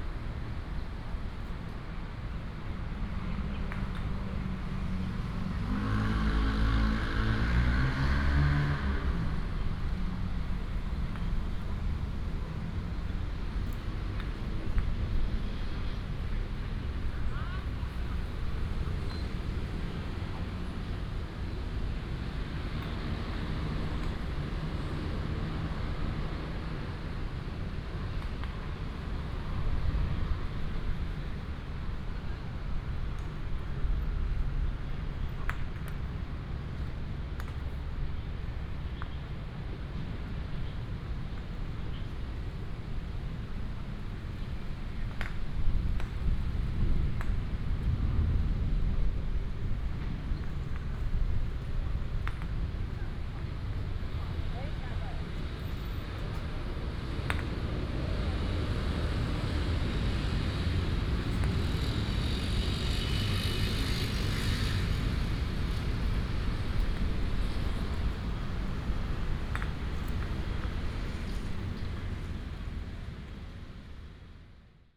in the park, birds, A group of old people playing croquet on the green space, wind, Binaural recordings, Sony PCM D100+ Soundman OKM II